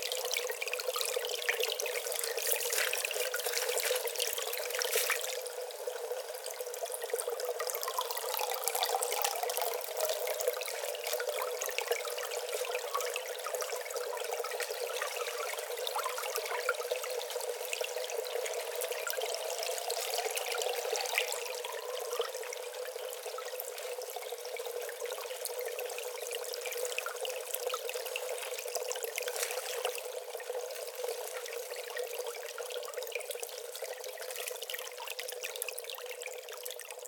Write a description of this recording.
Gentle stream recorded with two omnidirectional mics positioned on either side of the stream and hard panned to the left and right.